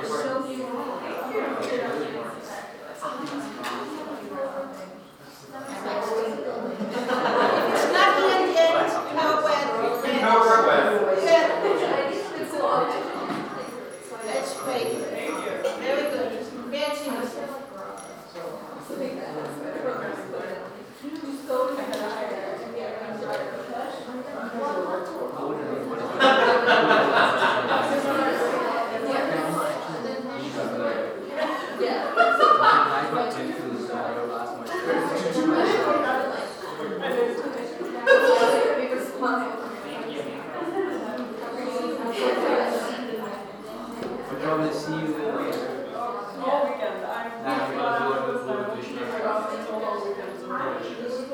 New Paltz, NY, USA - Max and Nadia Shepard Recital Hall
The Max and Nadia Shepard Recital Hall is a 125-seat hall named in honor of benefactors to the performing arts programs at New Paltz. It offers an intimate setting for chamber music performances and student recitals. This recording was taken just before a lecture was to begin. It was also taken using a Snowball condenser mic and edited through Garage Band on a Macbook Pro.
28 October 2016, 18:00